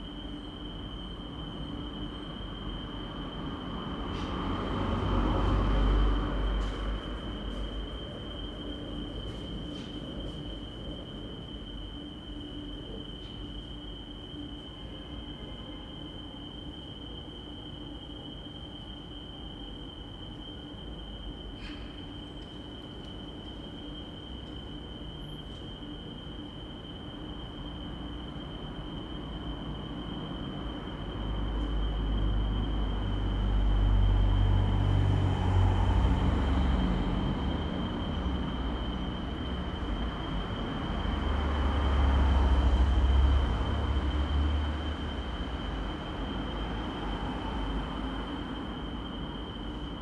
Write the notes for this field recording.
The arch into Ritterhof is closed by very impressive iron barred gates. One can only stand and look through while the traffic behind speeds past. An alarm rings forever. Two magpies fly over in silence.